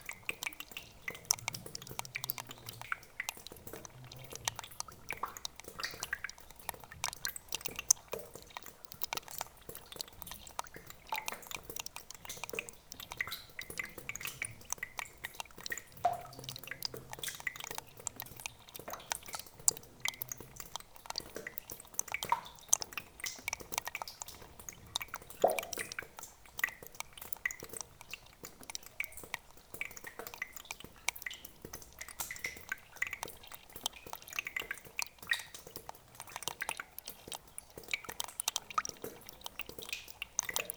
Montagnole, France - Small stream
A very small stream is flowing into the underground cement mine.
5 June, 16:30